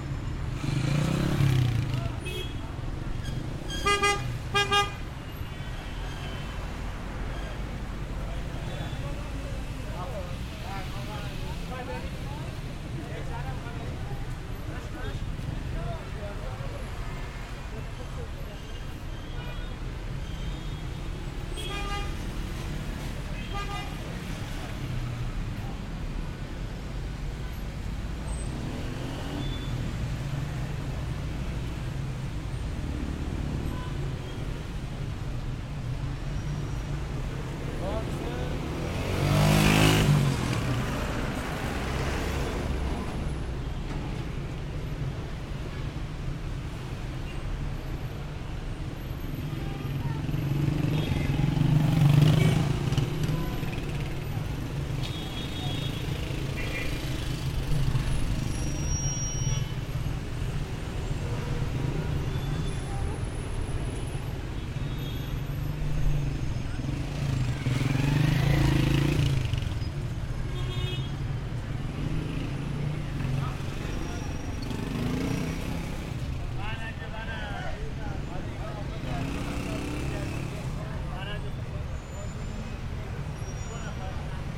Tehran Province, Tehran, Tajrish Square, Iran - Tajrish square
Recorded with a zoom h6 recorder.
I was circling around the square.
شهرستان شمیرانات, استان تهران, ایران